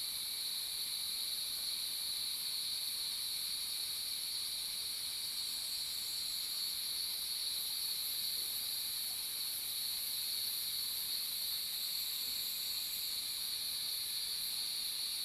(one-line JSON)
{"title": "華龍巷, 魚池鄉五城村, Taiwan - Cicada and Bird sound", "date": "2016-06-08 06:54:00", "description": "Cicada sounds, Bird sounds, For woods, traffic sound\nZoom H2n MS+XY", "latitude": "23.92", "longitude": "120.88", "altitude": "726", "timezone": "Asia/Taipei"}